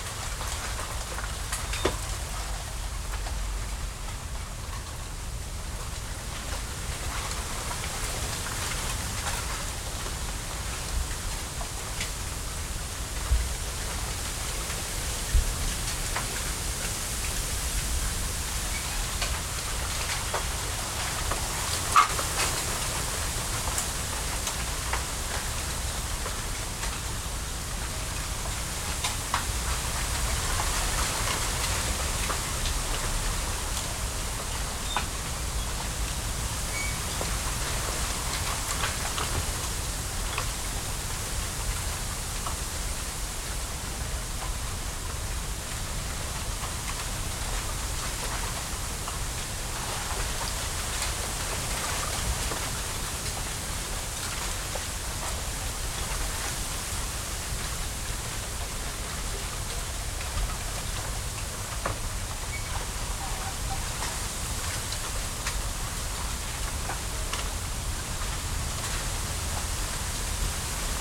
Bamboo grove in Tsuji, Rittō City, Shiga Prefecture, Japan - Wind in Bamboo
Wind passing through a small bamboo grove with some dry and fallen branches, aircraft and nearby traffic. Recorded with a Sony PCM-M10 recorder and two small omnidirectional microphones attached to either side of a backpack lying on the ground.
Tsuji, 八王子薬師堂, 13 March, ~15:00